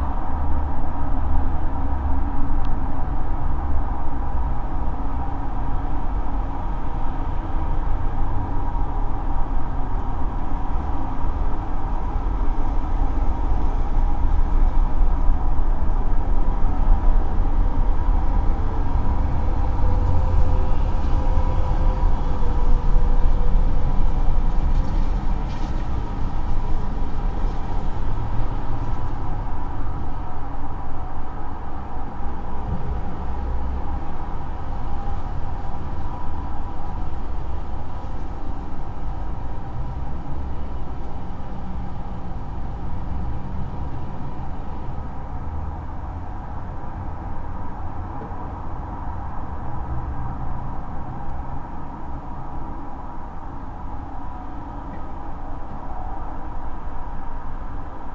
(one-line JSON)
{"title": "Utena, Lithuania, big metallic sculpture drone", "date": "2021-03-25 18:10:00", "description": "Metallic sculpture in the yard of art school. Multichanel recording: omni, contact, geophone.", "latitude": "55.50", "longitude": "25.59", "altitude": "112", "timezone": "Europe/Vilnius"}